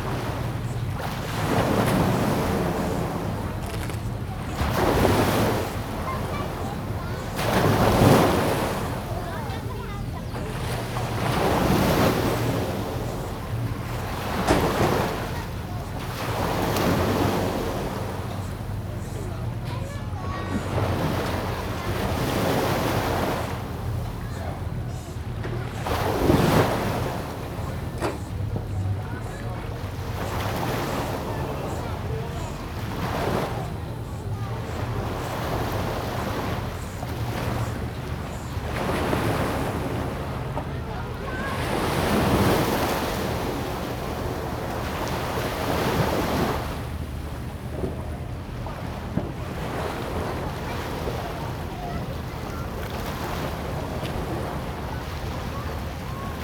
淡水榕堤, Tamsui District, New Taipei City - tide
Sound tide, Yacht travel by river
Zoom H2n MS+XY
New Taipei City, Taiwan